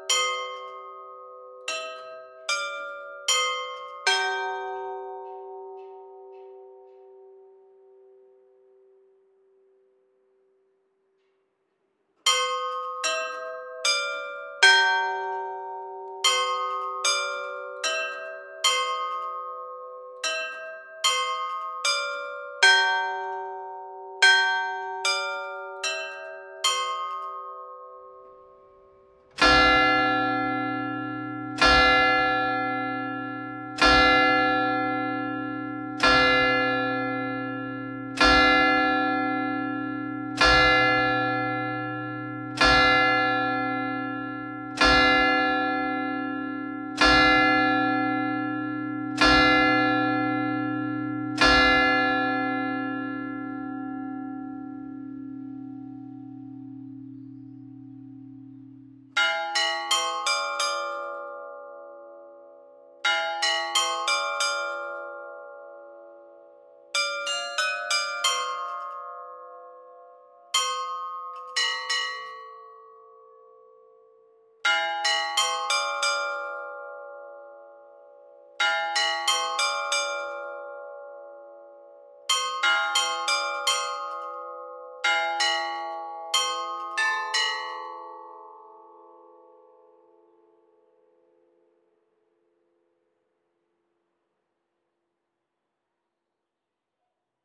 Grand Place, Harnes, France - Harnes - église St Martin - carillon automatisé
Harnes - église St Martin - Ritournelles automatisées et heures (10h et 11h)
France métropolitaine, France, June 2020